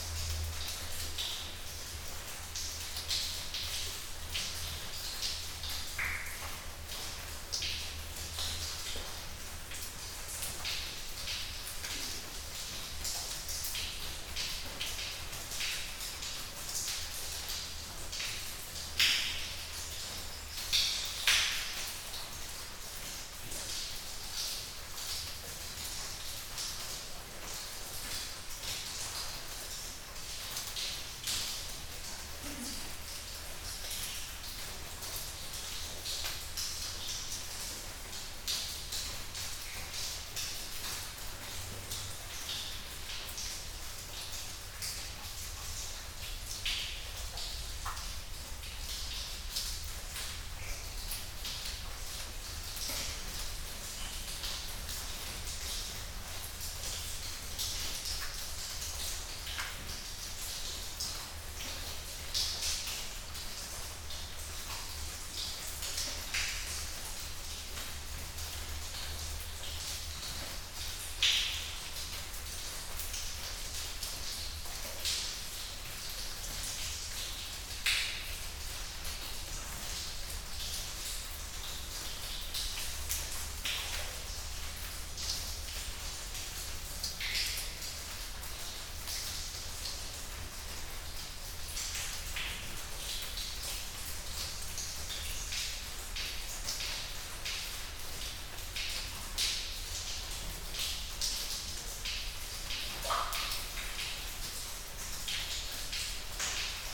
Unnamed Road, Crickhowell, UK - Inside the cave
Leaving a Sony PCM-A10 and some LOM MikroUSI's in a cave in the Brecon Beacons.
Cymru / Wales, United Kingdom, 6 August 2020, ~11:00